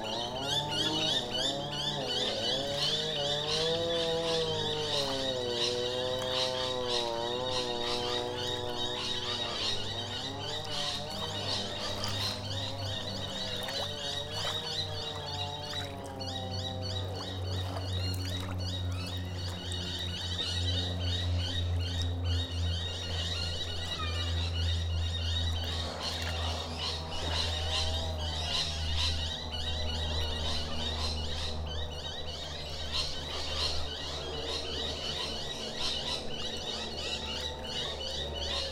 Como, NSW, Australia - Winters afternoon by the suburban mangroves
Waves softly lap, people trim their lawns in the distance, motorboats rush across the water, Rainbow Lorikeets and Noisy Miners call in the surrounding trees, someone listens to music in their garage, trains drone from above.
Recorded with a pair of AT4022's placed on a log + Tascam DR-680.
11 July 2015, 15:30